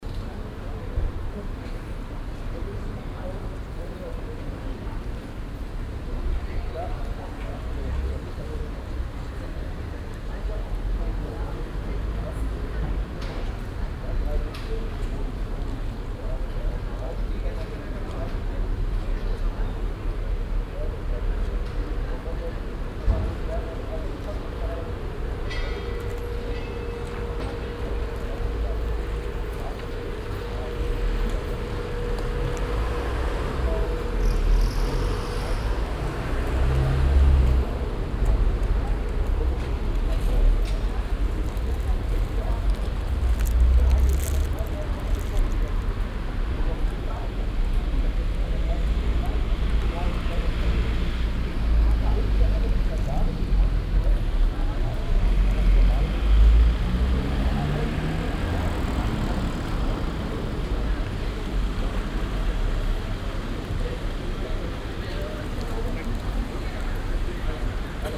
{"title": "cologne, breite strasse, platz, mittags", "date": "2008-06-04 11:53:00", "description": "soundmap: köln/ nrw\nmittagspause in der sonne auf dem kleinen platz an der breite strasse. verkehr, schritte, gesprächsfetzen\nproject: social ambiences/ listen to the people - in & outdoor nearfield recordings", "latitude": "50.94", "longitude": "6.95", "altitude": "58", "timezone": "Europe/Berlin"}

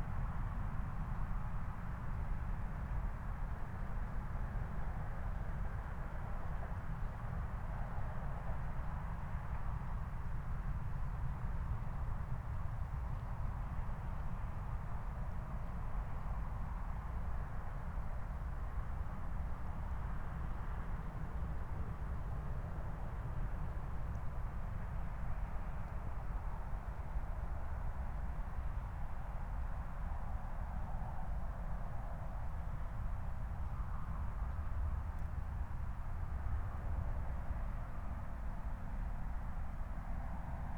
{"title": "Moorlinse, Berlin Buch - near the pond, ambience", "date": "2020-12-23 23:19:00", "description": "23:19 Moorlinse, Berlin Buch", "latitude": "52.64", "longitude": "13.49", "altitude": "50", "timezone": "Europe/Berlin"}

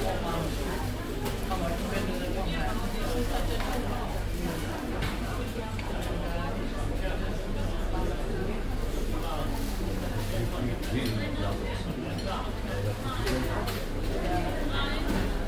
{"title": "langenfeld, stadtgalerie, cafe", "date": "2008-04-19 10:45:00", "description": "project: : resonanzen - neanderland - social ambiences/ listen to the people - in & outdoor nearfield recordings", "latitude": "51.11", "longitude": "6.95", "altitude": "51", "timezone": "Europe/Berlin"}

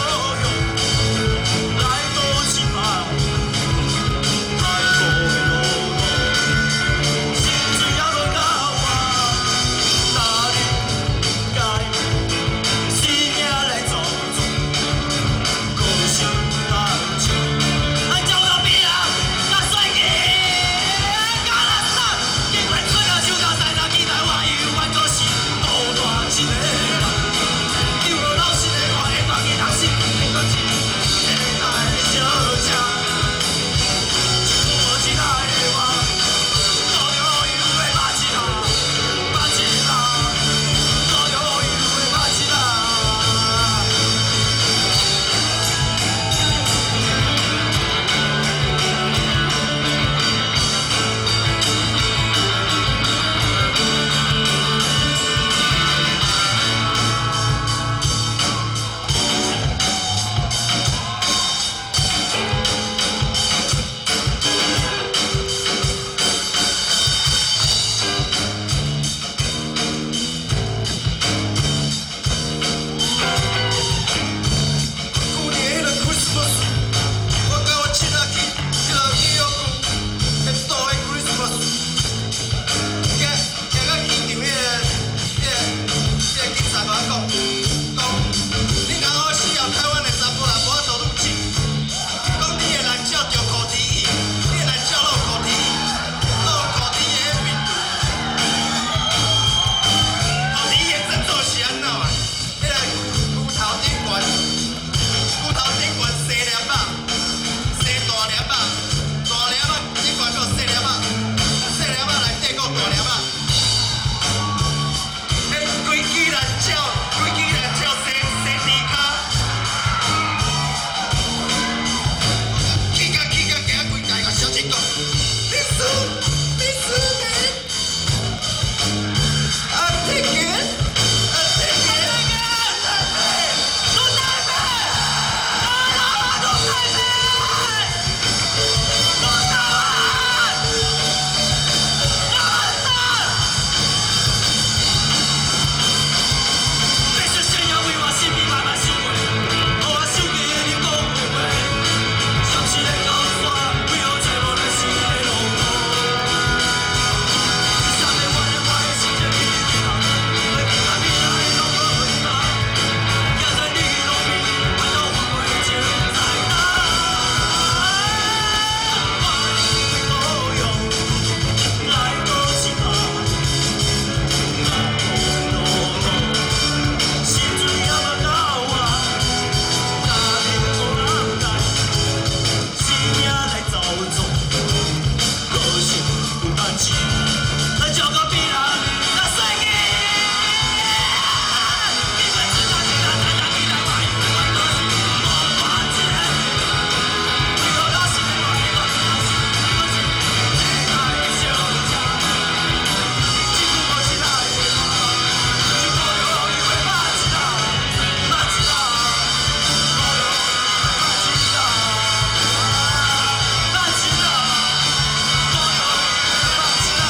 {"title": "Daan Forest Park, Taipei - Rock band performing", "date": "1997-03-28 21:35:00", "description": "Rock band performing, Songs full of irony and swearing", "latitude": "25.03", "longitude": "121.54", "altitude": "7", "timezone": "Asia/Taipei"}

